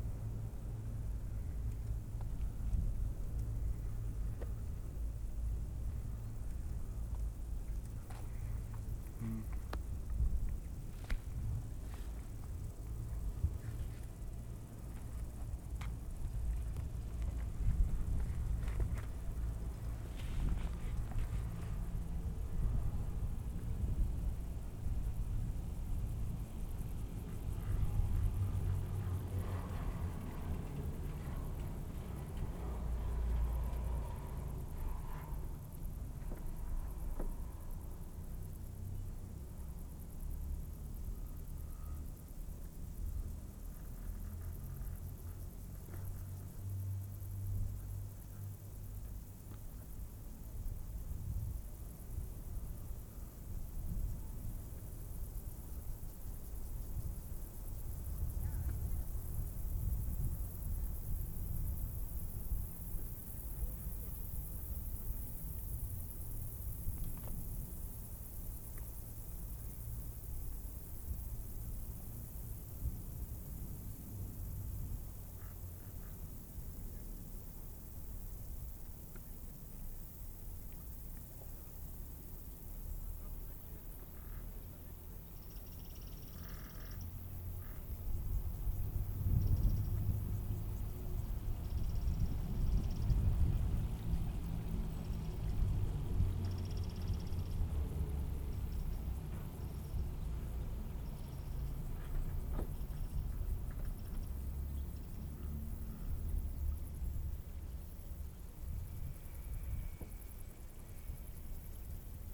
Hochmaisbahn, Hinterthal, Austria - Hochmaisbahn chairlift, top to bottom
Riding the Hochmaisbahn on a hot summer day.
23 July 2015